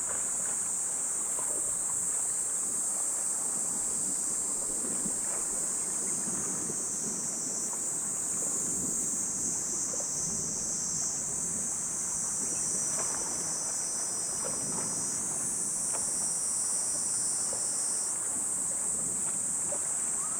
Yuyatsuo, Nagato, Yamaguchi, Japon - Stones statues of fox
Stones statues of fox and mysterious scenery.
Marantz PMD661MKII recorder with microphone ST M/S AKG Blue line CK 94 and Sennheiser mkh 416 p48
30 July 2019, 16:29